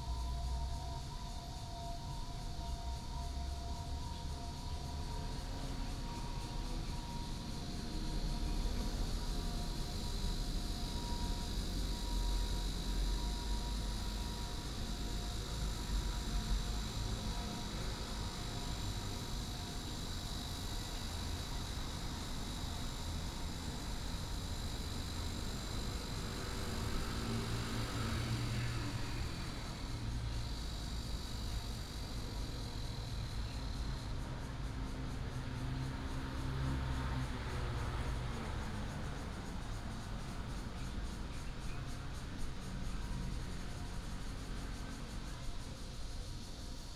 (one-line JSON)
{"title": "舊社公園, Zhongli Dist., Taoyuan City - Small park", "date": "2017-07-28 07:53:00", "description": "in the Park, Cicada cry, birds sound, traffic sound, ambulance", "latitude": "24.95", "longitude": "121.22", "altitude": "135", "timezone": "Asia/Taipei"}